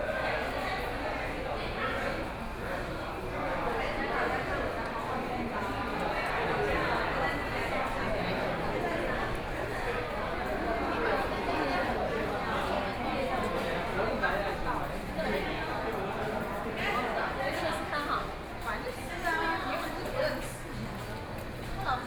宜蘭觀光酒廠, Yilan City - in the Tourist shopping
in the Tourist shopping, Many tourists
Sony PCM D50+ Soundman OKM II
Yilan County, Taiwan, 5 July 2014